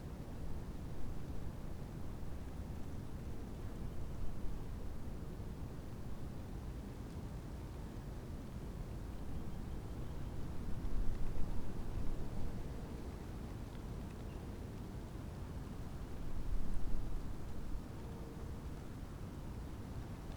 ivy leaves fluttering in the wind
the city, the country & me: january 3, 2014